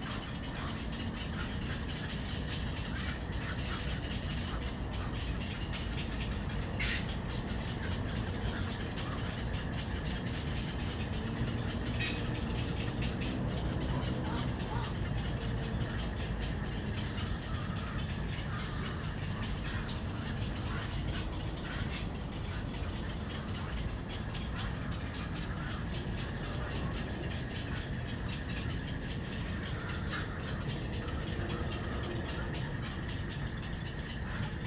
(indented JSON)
{
  "title": "Daan Forest Park, Da'an District, Taiwan - Bird calls",
  "date": "2015-06-26 22:03:00",
  "description": "Bird calls, Ecological pool, in the park",
  "latitude": "25.03",
  "longitude": "121.53",
  "altitude": "8",
  "timezone": "Asia/Taipei"
}